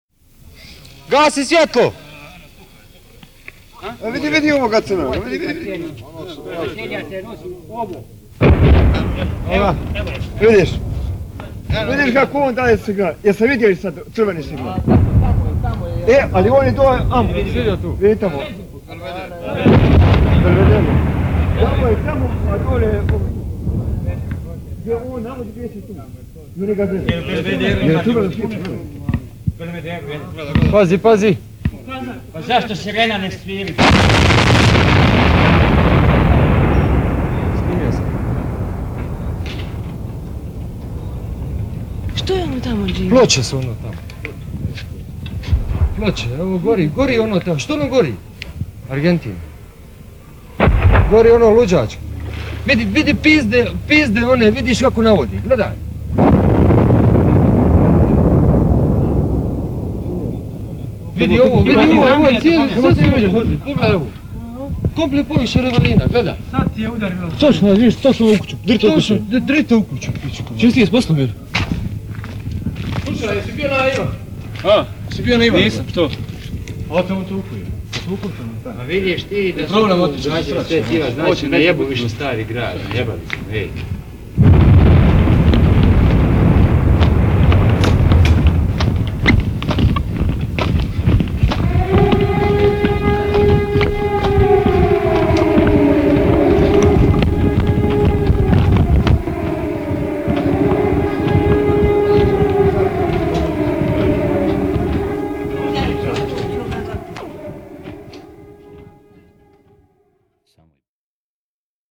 Dubrovnik, October 1991, bombardment of town - Porporela, Radio Dubrovnik stuff surprised by shelling
recorded by the stuff of Radio Dubrovnik ( named in war days "Submarine by the course of 105 MGH"); one of the first shellings of the town core: explosions, counting hits, running away, the siren
Dubrovnik, Croatia, 5 October 1991